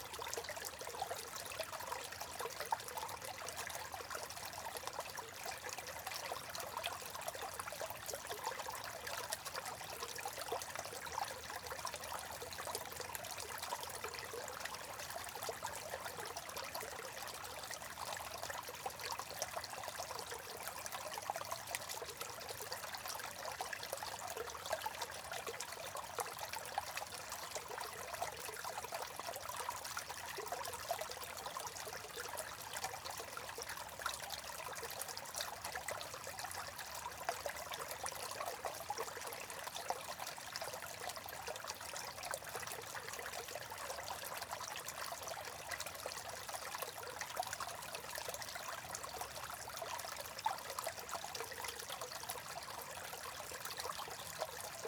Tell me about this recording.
Went out for a walk with my recording gear to a woods not far from my home. Due to the amount of rain we have had recently there is quite a lot of water running off the fields and it has created a few extra streams in this woods, which are usually dry in the summer. I found a nice little spot to record the trickling of the water. Weather - Cold, Dry, partly cloudy and a light breeze, Microphone - 2 x DPA4060, Recorder - Sound Devices Mixpre-D & Tascam DR100